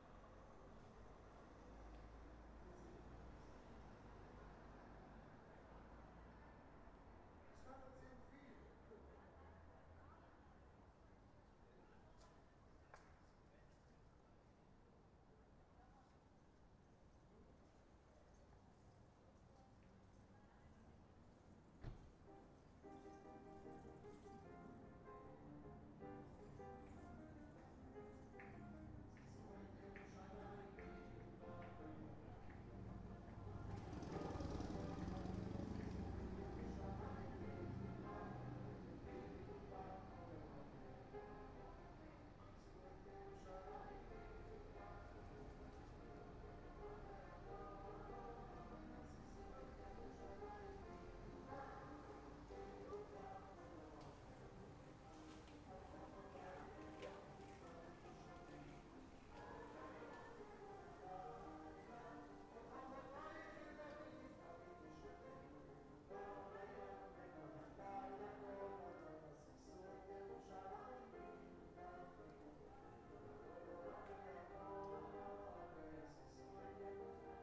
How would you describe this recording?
In a few days before the jewish new year some people are singing some songs after a short speech, obviously an open window, while cars are parking, motorcycles are driving down the street...